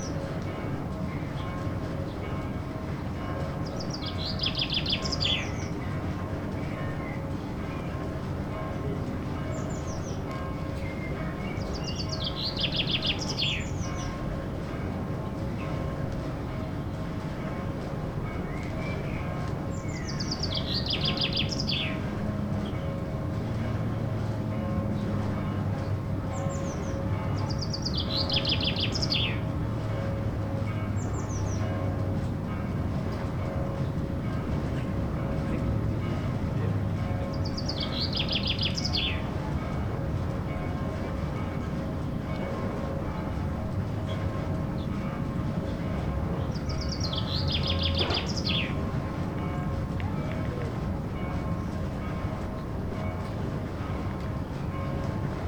burg/wupper, steinweg: sesselbahn - the city, the country & me: under a supporting tower of a chairlift
rope of chairlift passes over the sheaves, church bells, singing bird
the city, the country & me: may 6, 2011
Solingen, Germany